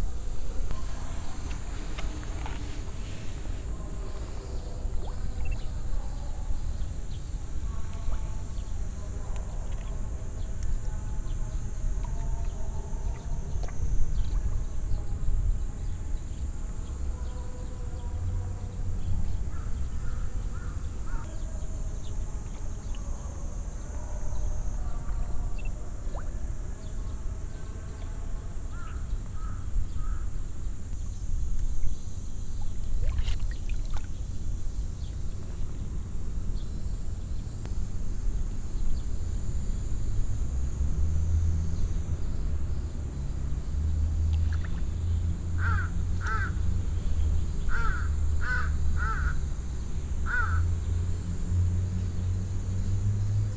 tokyo - kiyosumishirakawa garden - lake
a the gardens lake - big fishes and turtles waiting for food at the visitors feed and bubble in the water- background: cicades, digital photo beeps and a political announcement from the main street - unfortunately some wind
intrnational city maps - social ambiences and topographic field recordings